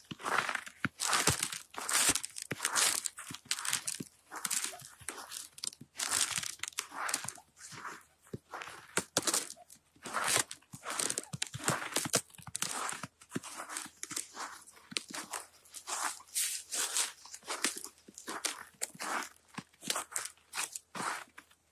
When the temperature was many days under the zero and the snow fell quite a few days ago, this clip is interesting because it combines the sound of snow and ice beneath it. Nice snow and crispy ice recordings!
Zoom H4n PRO
Internal Mics